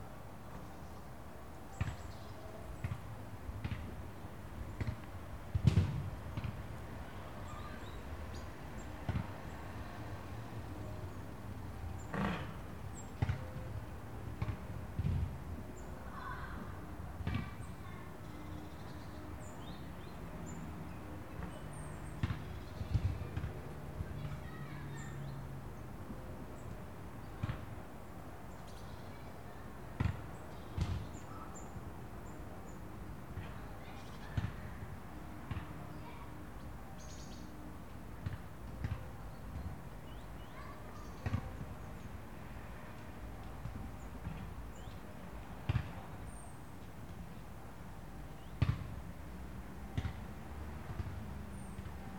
Bytów, Polska - szkoła, school
Dźwięki nagrane w ramach projektu: "Dźwiękohistorie. Badania nad pamięcią dźwiękową Kaszubów." The sounds recorded in the project: "Soundstories. Investigating sonic memory of Kashubians."